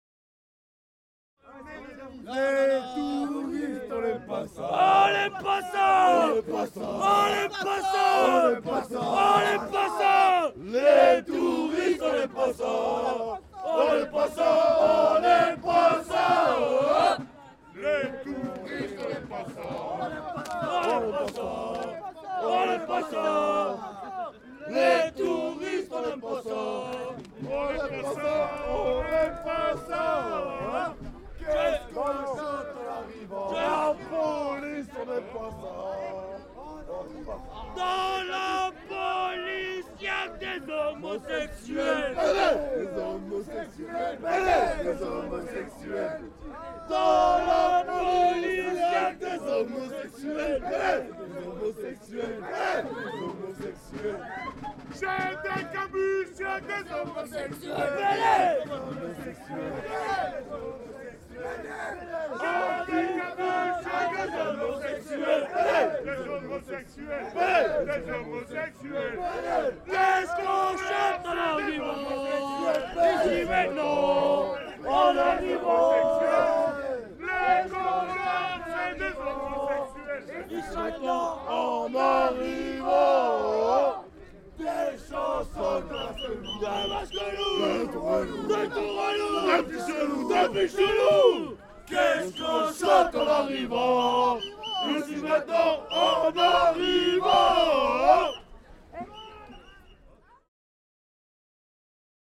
Chem. du Banc Vert, Dunkerque, France - Petite Synthe - carnaval 2020
Dans le cadre des festivités du Carnaval de Dunkerque
Bande (fanfare) de Petite Synthèse (Département du Nord)
Au cœur de la fanfare
France métropolitaine, France, 29 February